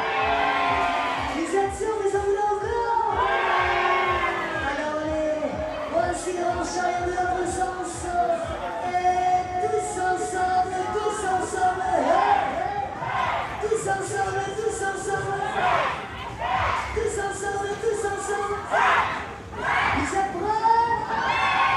St-Omer (Pas-de-Calais)
Ducasse - fête foraine
ambiance - extrait 2 - fin d'après-midi
Fostex FR2 + AudioTechnica BP425